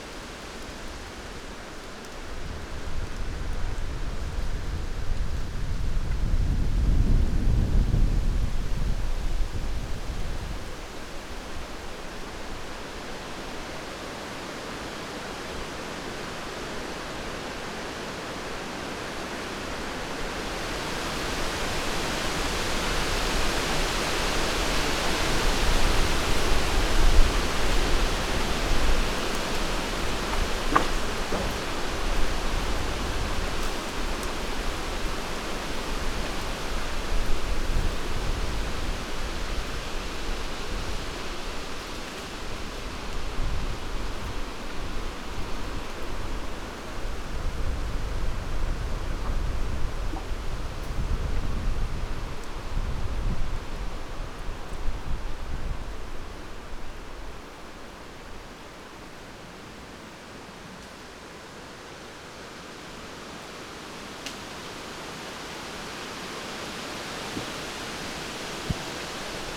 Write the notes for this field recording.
summer afternoon with strong and hot winds